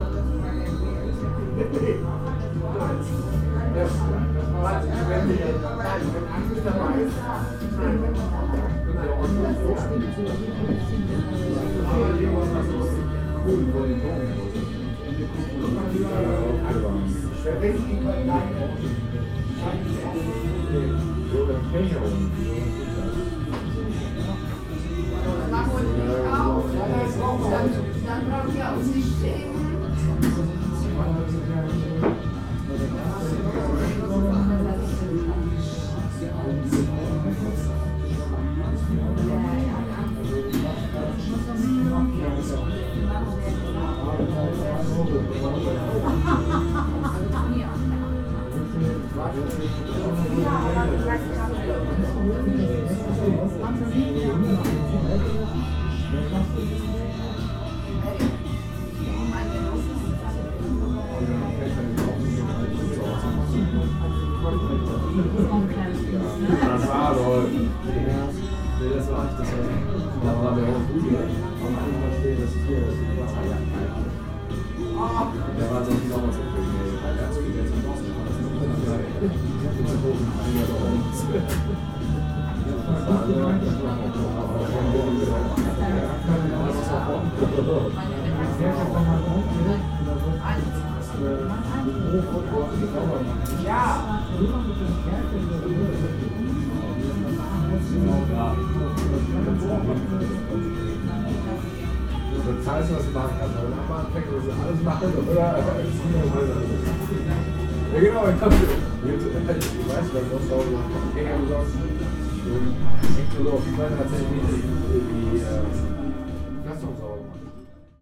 Oststadt, Hannover, Deutschland - ramberg-eck
ramberg-eck, seumestr. 5, 30161 hannover
Hannover, Germany, 6 March 2015, 22:15